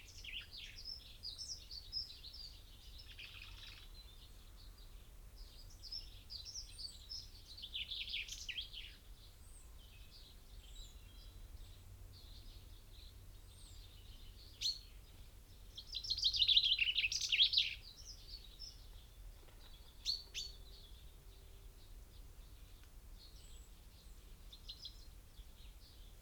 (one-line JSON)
{"title": "Luttons, UK - Chaffinch song soundscape ...", "date": "2010-02-20 07:00:00", "description": "Chaffinch song and call soundscape ... recorded with binaural dummy head to Sony Minidisk ... bird songs ... calls from ... tree sparrow ... robin ... dunnock ... blackbird ... crow ... wood pigeon ... great spotted woodpecker ... wood pigeon ... stove dove .. blue tit ... great tit ... mute swan wing beats ... coal tit ... plus background noise ... traffic ...", "latitude": "54.12", "longitude": "-0.57", "altitude": "97", "timezone": "GMT+1"}